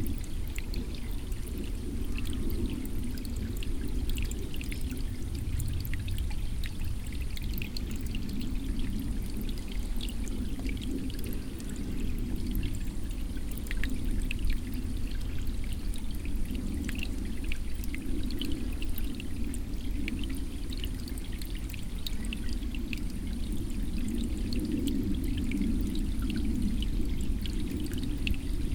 waldberg, forest, small stream
Inside the valley of a broadleaf forest. The sound of a small stream flowing slowly across stones. Around many birds tweeting vividly some wind movements in he trees and a screech owl howling nearby.
Luxembourg, September 2011